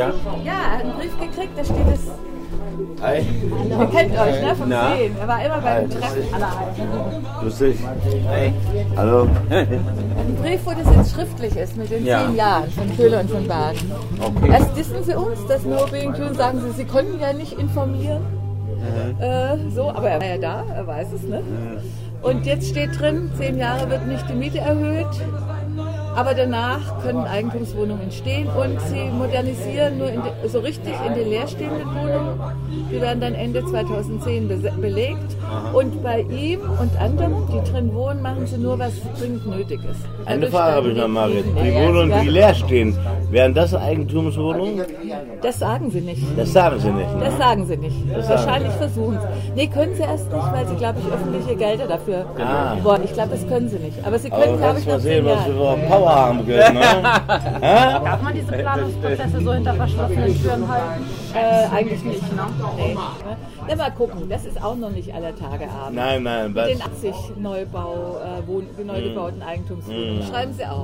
Sailors Inn
Aus der Serie "Immobilien & Verbrechen". Schnaps, Gespräche und Post vom Investor.
Keywords: Gentrifizierung, St. Pauli, Köhler & von Bargen, NoBNQ - Kein Bernhard Nocht Quartier
Hamburg, Germany